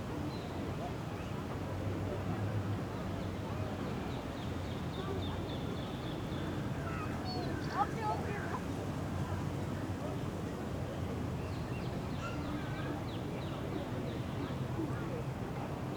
Wasser (Rhein), Wind, Sonne, gelöste Stimmung der Menschen, urbane Hintergründe (Zug, Auto, Glocken), Motorboot, Vögel.
Baden-Württemberg, Deutschland, 26 May